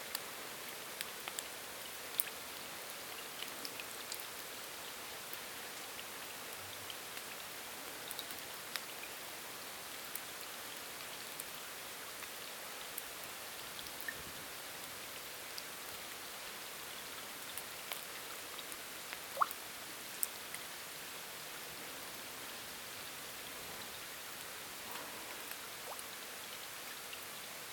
Ljungskile, Sweden - Ivarsbo Sjö - binaural
the quietest place; recorded with OKM II with Zoom H4n
November 2017